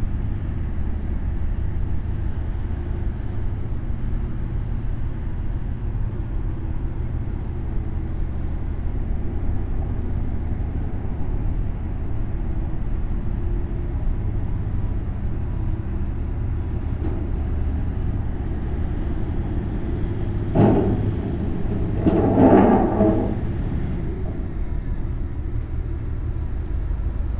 Le Mesnil-sous-Jumièges, France - Le Mesnil-sous-Jumièges ferry
The ferry crossing the Seine river, from Yville-Sur-Seine to Le Mesnil-sous-Jumièges. It's charging cars. Unfortunately, it's raining a lot.